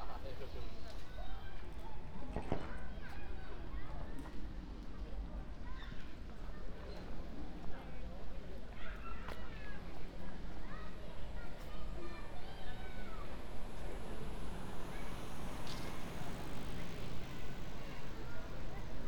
Ascolto il tuo cuore, città. I listen to your heart, city. Chapter CXXXIII - Almost sunset at Valentino park in the time of COVID19: soundwalk
"Almost sunset at Valentino park in the time of COVID19": soundwalk
Chapter CXXXIII of Ascolto il tuo cuore, città. I listen to your heart, city
Saturday, September 26th 2020. San Salvario district Turin, to Valentino, walking in the Valentino Park, Turin, five months and fifteen days after the first soundwalk (March 10th) during the night of closure by the law of all the public places due to the epidemic of COVID19.
Start at 6:00 p.m. end at 7:00 p.m. duration of recording 01:00:15. Local sunset time 07:17 p.m.
The entire path is associated with a synchronized GPS track recorded in the (kmz, kml, gpx) files downloadable here: